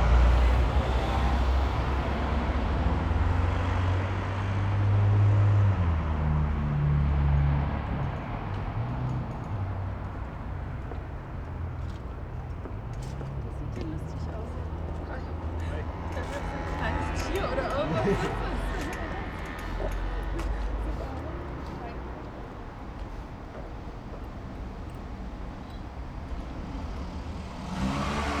Berlin: Vermessungspunkt Friedelstraße / Maybachufer - Klangvermessung Kreuzkölln ::: 27.10.2010 ::: 14:57
Berlin, Germany, 27 October